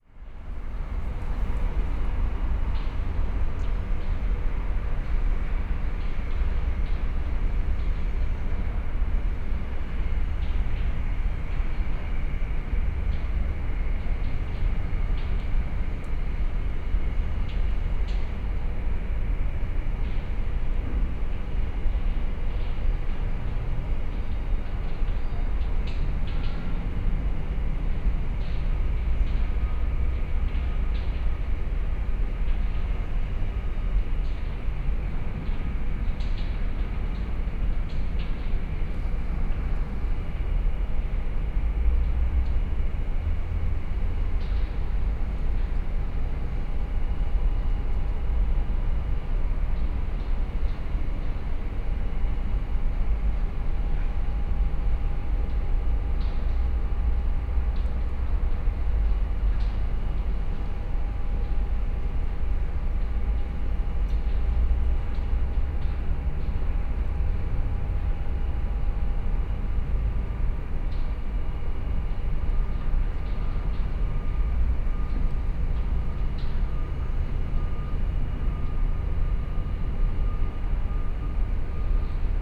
cargo train terminal, Ljubljana - industrial soundscape
ambiance at the freight train terminal, sounds from the nearby thermo-electrical power station.
(Sony PCM-D50, DPA4060)
7 November 2012, 11:25